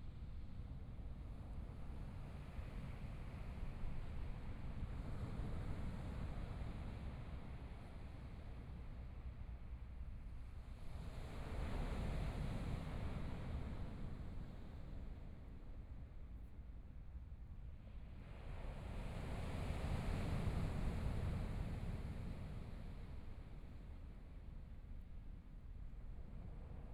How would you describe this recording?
Sound of the waves, Aircraft flying through, Binaural recordings, Zoom H4n+ Soundman OKM II